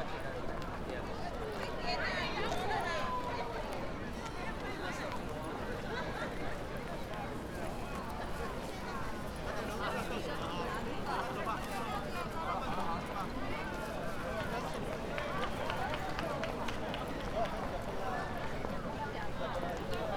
Tokyo, Uedo Park - evening picnic
big picnic in the park, people gathering all over the place, huge crowd moving around the park, grilling, eating, drinking, having fun, reflecting on blooming sakura trees - the japanese way.
北葛飾郡, 日本, 28 March 2013, ~20:00